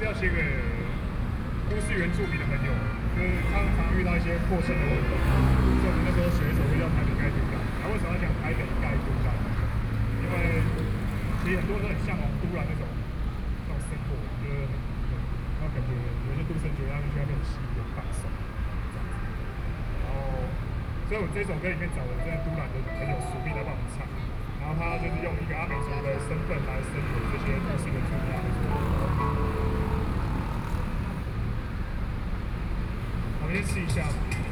Taipei - Anti-Nuclear Power
anti–nuclear power, in front of the Plaza, Broadcast sound and traffic noise, Sony PCM D50 + Soundman OKM II